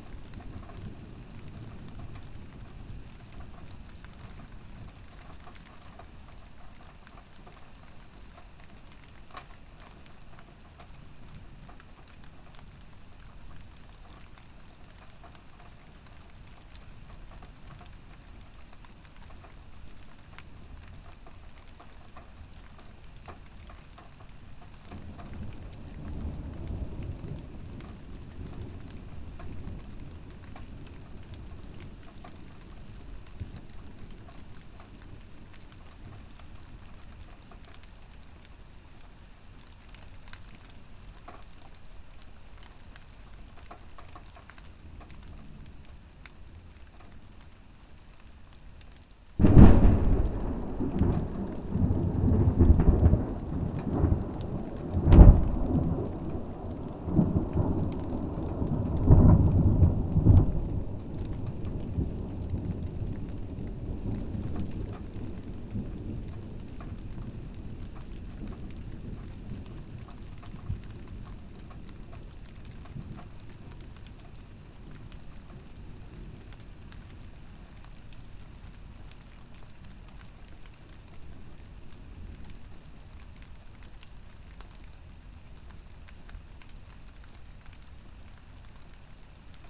Mont-Saint-Guibert, Belgique - Thunderstorm
A terrible thunderstorm ravage the Brabant-Wallon district. 30 Liters fall down in 10 minutes. In the nearby city of Court-St-Etienne, 300 houses were devastated. On 14:42, the thunderbolt is very near.